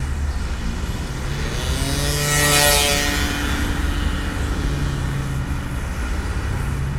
scooter passing by recorded with DPA mics (binaural) and Edirol R-44
Laak, The Netherlands, 2 March 2012